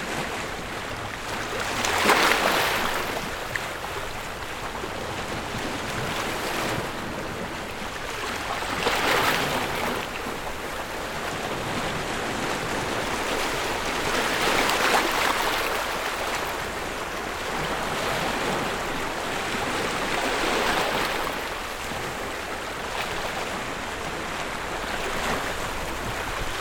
wave sound
Captation : ZOOM H6
27 July 2022, 20:30